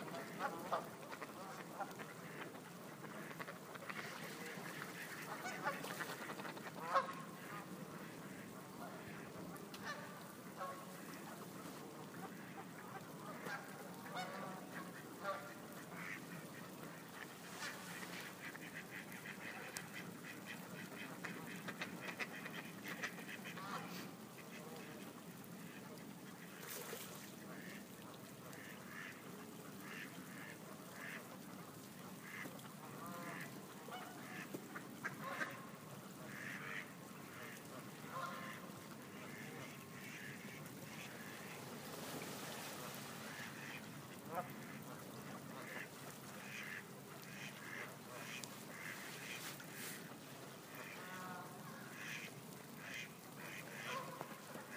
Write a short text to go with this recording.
Soundscape of birds in the stream by the TH Path